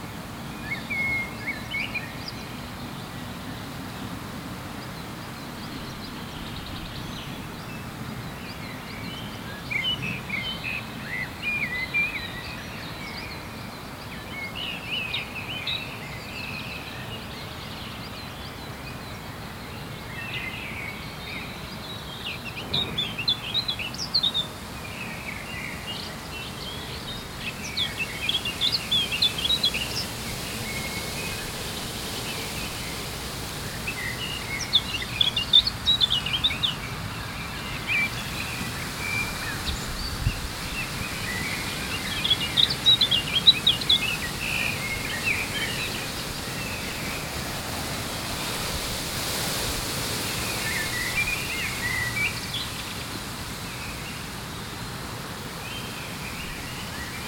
Tech Note : SP-TFB-2 binaural microphones → Olympus LS5, listen with headphones.
Wallonie, België / Belgique / Belgien, 27 May 2022